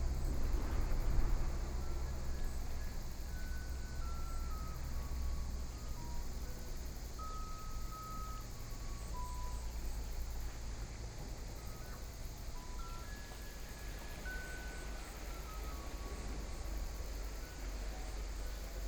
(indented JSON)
{
  "title": "貢寮區福連村, New Taipei City - Small village",
  "date": "2014-07-29 18:39:00",
  "description": "Small village, Sound of the waves, Traffic Sound",
  "latitude": "25.02",
  "longitude": "121.99",
  "altitude": "4",
  "timezone": "Asia/Taipei"
}